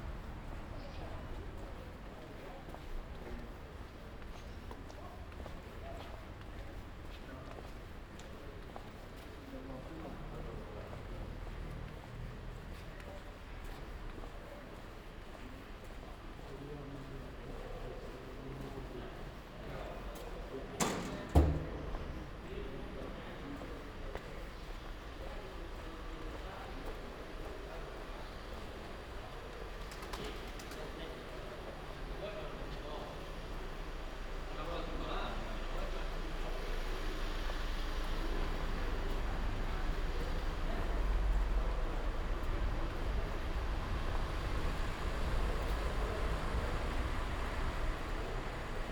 “Shopping au marché ouvert sur la place at the time of covid19” Soundwalk
Chapter XXXI of Ascolto il tuo cuore, città. I listen to your heart, city.
Thursday April 2nd 2020. Shopping in the open air square market at Piazza Madama Cristina, district of San Salvario, Turin, twenty three days after emergency disposition due to the epidemic of COVID19.
Start at 10:44 a.m., end at h. 11:11 a.m. duration of recording 26’58”
The entire path is associated with a synchronized GPS track recorded in the (kml, gpx, kmz) files downloadable here:
Piemonte, Italia, 2 April